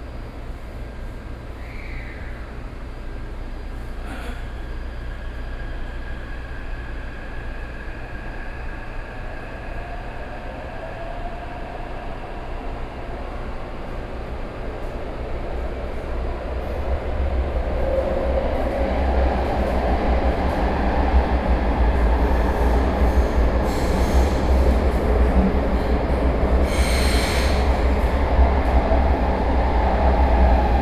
New Taipei City, Taiwan - In the subway